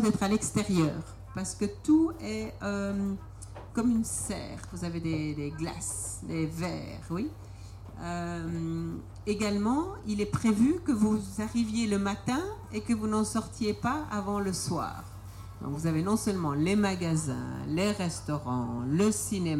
Canal, Bruxelles, Belgique - Waterbus on the canal with guide talking